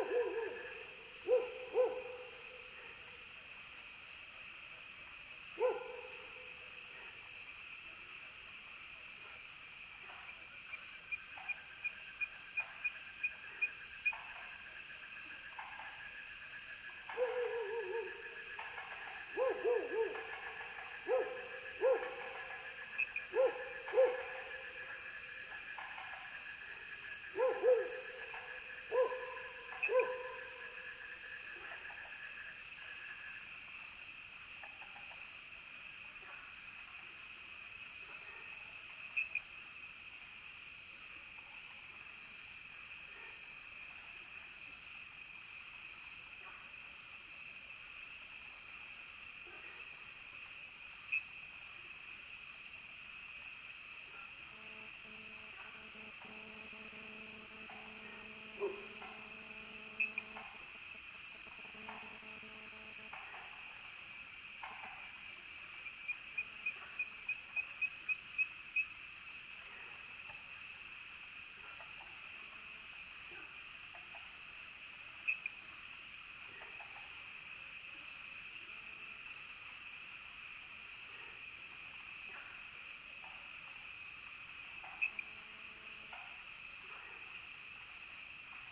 三角崙, Yuchi Township, Nantou County - Night in the woods
Frogs chirping, Sound of insects, Dogs barking
Zoom H2n MS+XY
19 April 2016, ~19:00